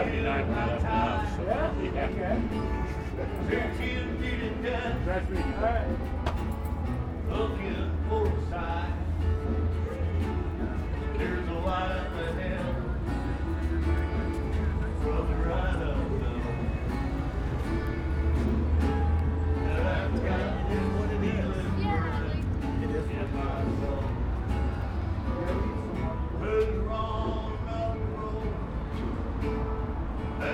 neoscenes: back on Whiskey Row